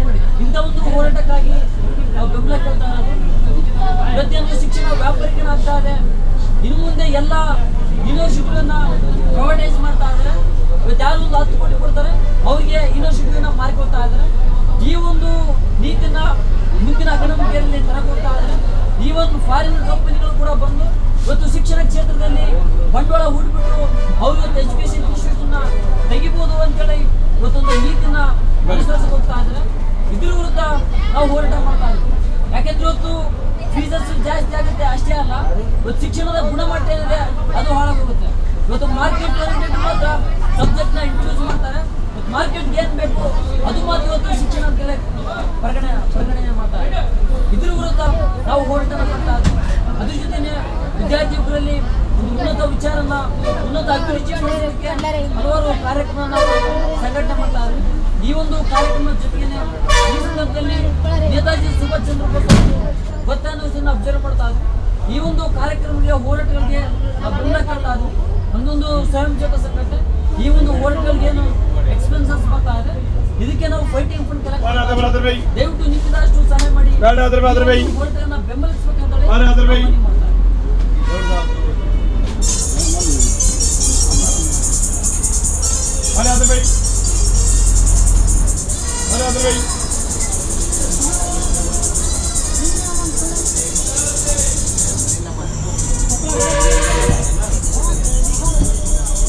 Hospet, Bus station, Waiting in The Bus
India, Karnataka, Bus, Bus station